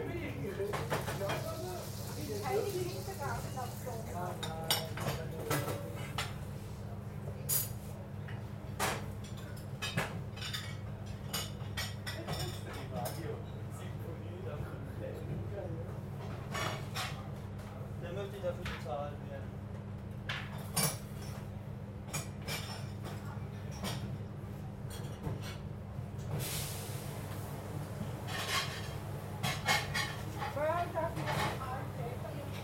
St. Gallen (CH), restaurant kitchen
inside recording, kitchen of restaurant "Zum Goldenen Schäfli" (recommended!).
recorded june 27th, 2008, around 10 p. m.
project: "hasenbrot - a private sound diary"
St. Gallen, Switzerland